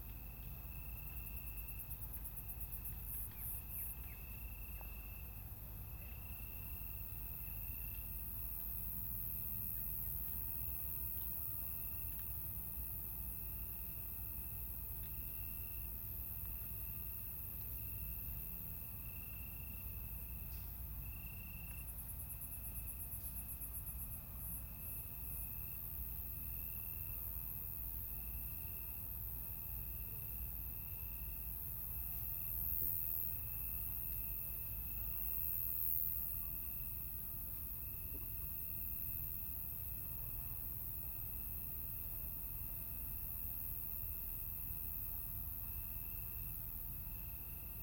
R. das Portas, Portugal - Insects at night
Braga, Portugal